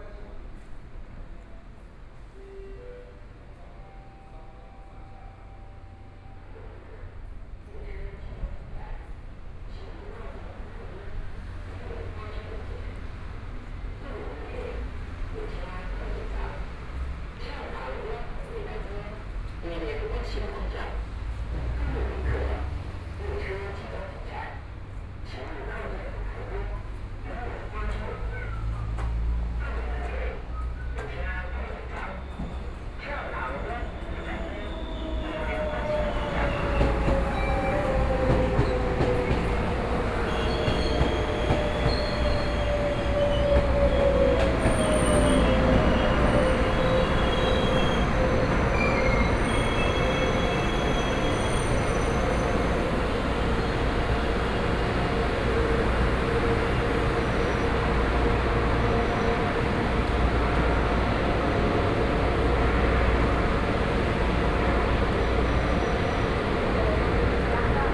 Zuoying Station, Kaohsiung City - Walking in the station
Walking in the station, Hot weather, Traffic Sound, Take the elevator, Entering the station hall, Toward the station platform
Zuoying District, Kaohsiung City, Taiwan, 15 May, ~13:00